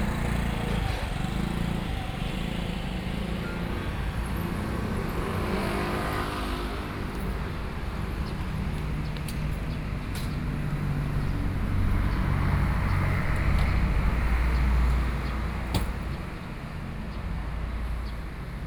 Nuzhong Rd., Yilan City - Traffic Sound
In front of the convenience store, Traffic Sound, At the roadside
Sony PCM D50+ Soundman OKM II